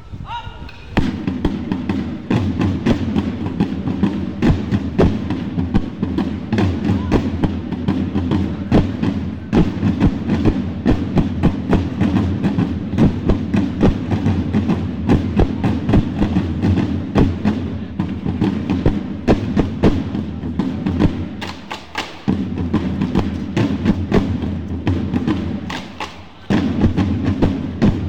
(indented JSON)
{
  "title": "Feltre (Belluno) Italy",
  "date": "2010-08-16 23:01:00",
  "description": "Palio di Feltre (7 agosto 2010): tamburi e rullanti accompagnano gli sbandieratori delle contrade.",
  "latitude": "46.02",
  "longitude": "11.91",
  "timezone": "Europe/Berlin"
}